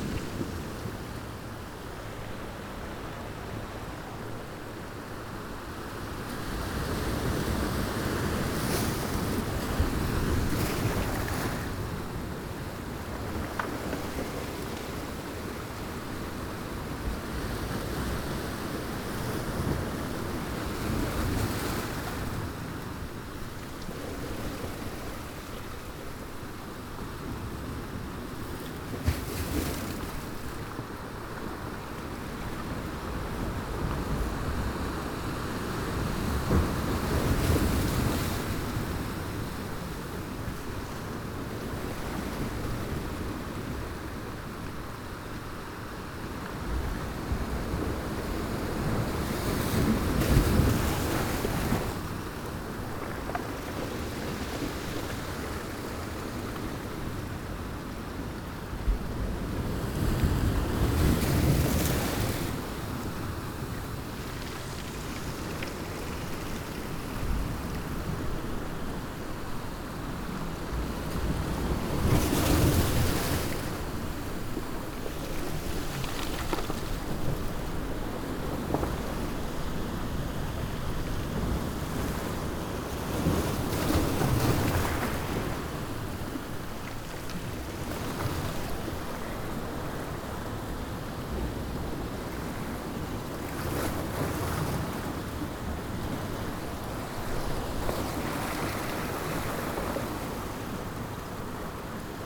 Portugal - Breaking waves
Ponta do Sol, breaking waves against a concrete blocks, wind and rocks, church audio binaurals with zoom h4n